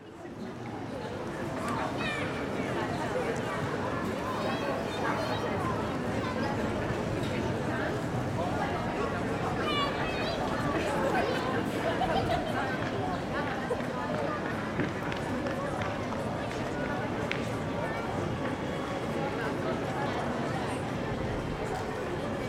people and sound art in the MQ on a sunny afternoon
Vienna, Austria